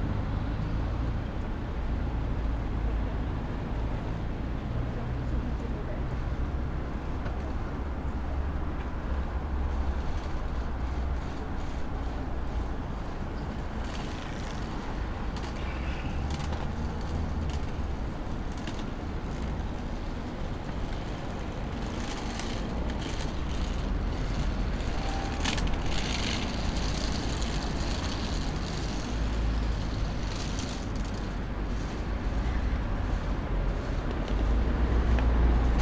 Pl. Hubert Dubedout, Grenoble, France - Dimanche matin
Près du pont traversant l'Isère, les bruits de la circulation.
Auvergne-Rhône-Alpes, France métropolitaine, France, 11 September 2022